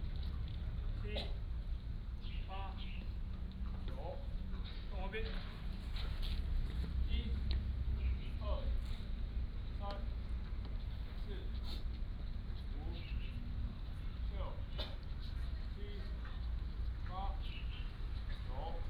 Nangan Township, Taiwan - In the playground
In the playground, Many soldiers are doing sports